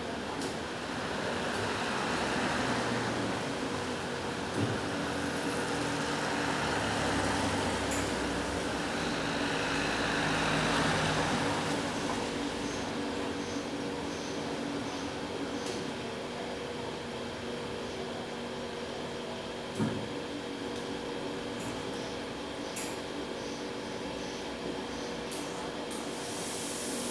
Porto, Rua de Miguel Bombarda - lavandaria olimpica
at the door of a laundry business. small room, a table for taking orders, one old, run-down, commercial washing machine doing it's cycle, puffing and steaming.
Porto, Portugal, 30 September